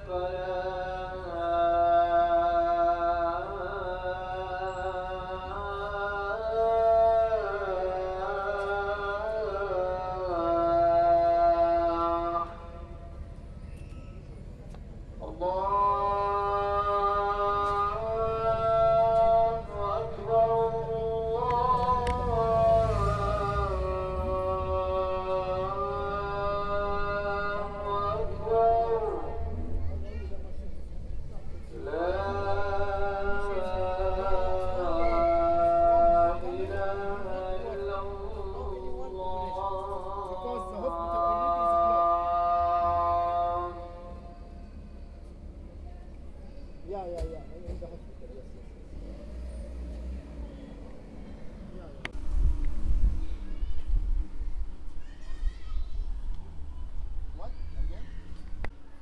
{
  "title": "ул. Малыгина, Махачкала, Респ. Дагестан, Россия - evening adhan",
  "date": "2020-09-05 16:50:00",
  "description": "Evening adhan. Idris Khazhi Mosque in Makhachkala. Recorder: Tascam DR-40.",
  "latitude": "42.98",
  "longitude": "47.51",
  "timezone": "Europe/Moscow"
}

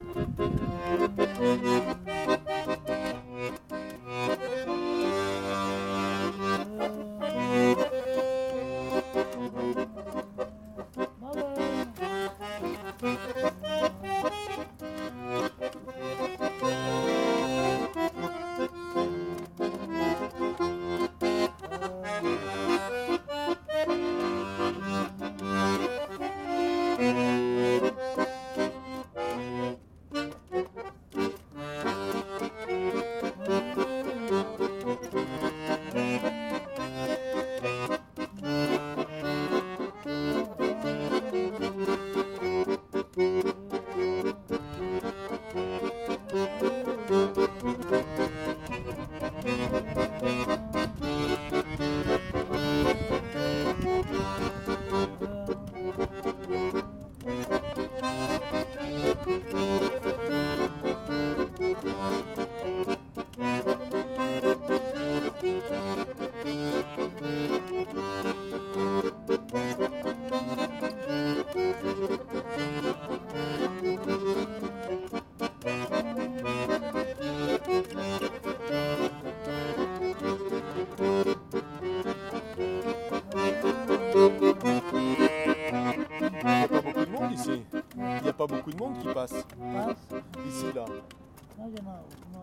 {
  "title": "Nassaubrug, Bonapartedok, Antwerpen, Belgique - Musicien de rue - Street musician",
  "date": "2018-09-12 10:02:00",
  "description": "à l'extrémité de la passerelle d'accès au musée Aan de Stroom, un accordéoniste roumain fait la manche et apporte un brin de gaieté à ces lieux\nAt the end of the access gateway to the Aan Museum in Stroom, a Romanian accordionist makes the round and brings a touch of cheerfulness to these places",
  "latitude": "51.23",
  "longitude": "4.41",
  "altitude": "5",
  "timezone": "Europe/Brussels"
}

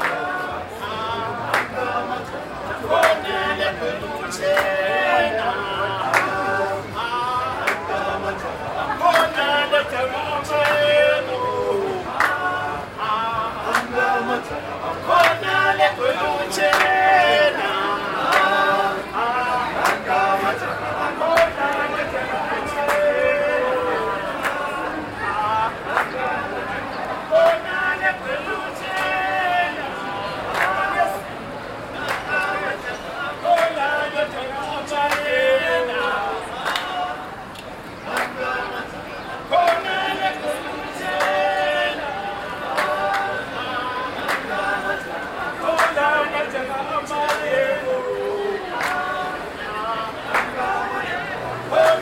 {
  "title": "Ave, Bulawayo, Zimbabwe - Radio Dialogue procession arriving",
  "date": "2012-10-12 10:35:00",
  "description": "Arrival of Radio Dialogue’s procession and other guests in the celebration tent set up outside and downstairs of the studios at Pioneer House on 8th Ave in Bulawayo. A local group performing a welcome-song…",
  "latitude": "-20.16",
  "longitude": "28.58",
  "altitude": "1362",
  "timezone": "Africa/Harare"
}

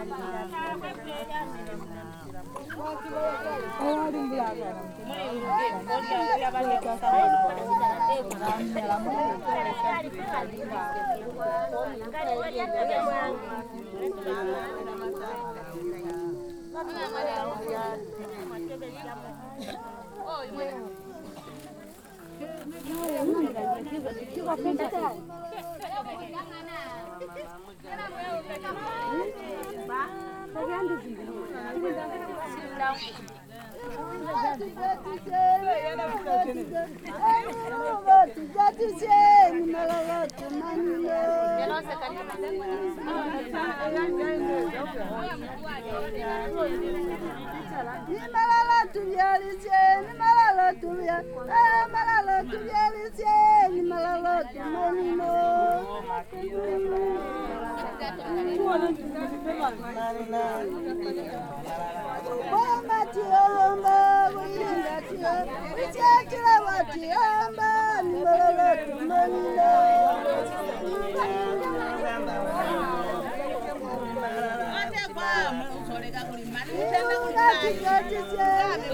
{
  "title": "Siachilaba, Binga, Zimbabwe - Bunsiwa weavers workshop",
  "date": "2018-10-26 10:45:00",
  "description": "every Saturday morning, the women weavers of Bunsiwa and surroundings are meeting to weave their baskets together, help each other, exchange news and learn more from experienced weavers like Notani Munkuli. the Bunsiwa weavers are supported by Zubo Trust and, apart from selling small amounts of baskets locally, they produce large orders to be sold via Lupane Women Centre in the neighbouring district; i'm accompanying Zubo's Donor Ncube to meet the weavers and we record a number of interview on the day...",
  "latitude": "-17.97",
  "longitude": "27.26",
  "altitude": "555",
  "timezone": "Africa/Harare"
}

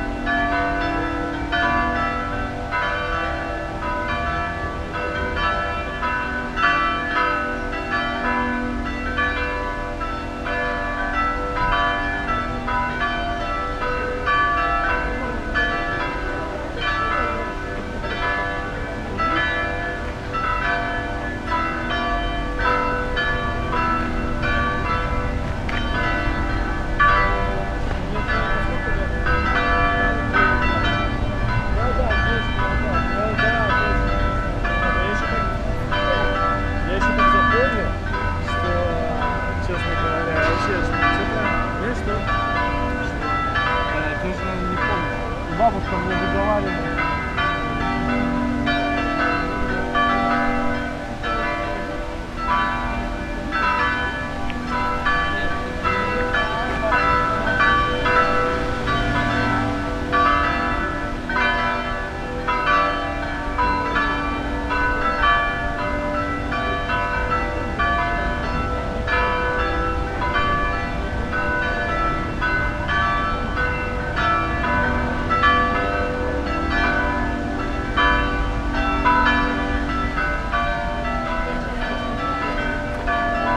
{
  "date": "2011-05-29 11:47:00",
  "description": "Brussels, Place du Petit Sablon.\nThe bells, Spanish tourists.",
  "latitude": "50.84",
  "longitude": "4.36",
  "altitude": "66",
  "timezone": "Europe/Brussels"
}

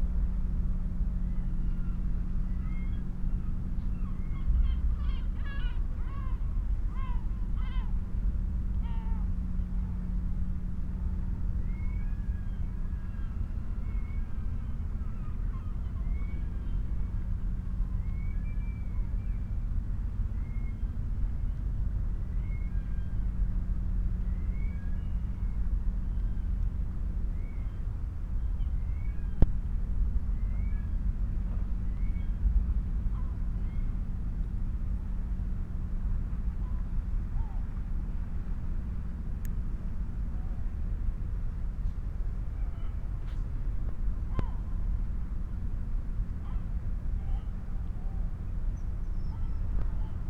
{
  "title": "Molo, Punto Franco Nord, Trieste, Italy - sea gulls echos",
  "date": "2013-09-08 16:47:00",
  "latitude": "45.67",
  "longitude": "13.76",
  "altitude": "21",
  "timezone": "Europe/Rome"
}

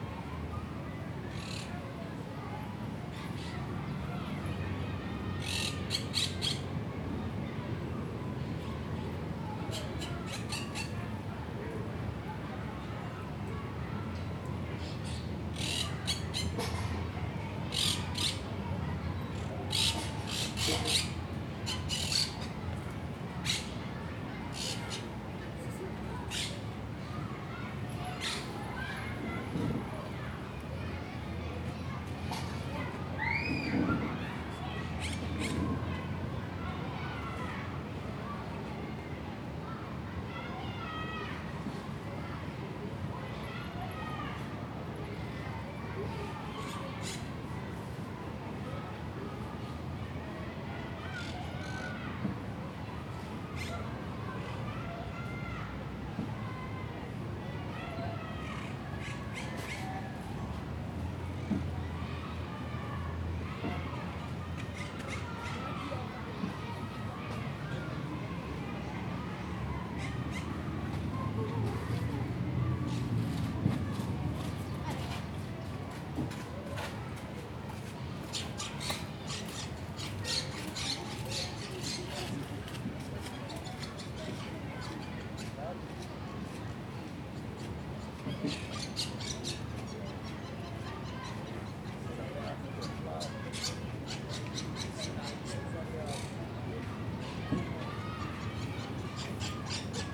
{
  "title": "Ciutadella Park, Passeig de Picasso, Barcelona, Barcelona, Spain - Birds by the lake",
  "date": "2014-12-05 13:15:00",
  "description": "Very loud colourful parakeets in the palm trees next to the lake. In the background you can hear children playing in the park, and occasionally bangs from the boats tied up in the lake.",
  "latitude": "41.39",
  "longitude": "2.19",
  "altitude": "11",
  "timezone": "Europe/Madrid"
}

